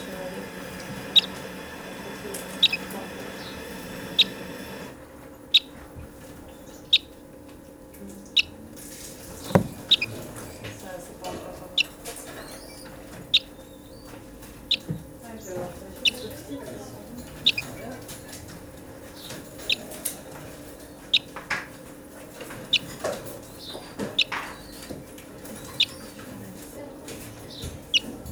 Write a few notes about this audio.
Birdsbay is a center where is given revalidation to wildlife. It's an hospital for animals. Here, we can hear a lonely greenfinch, mixed in the common sounds of the daily life of the center. Strangely, he's doing the timepiece. But why ?